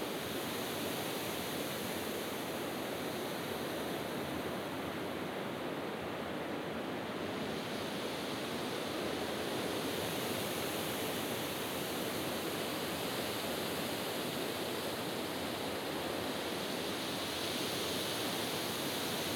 The noise of the wind in the forest, Russia, The White Sea. - The noise of the wind in the forest.
The noise of the wind in the forest.
Шум ветра в лесу.
June 2015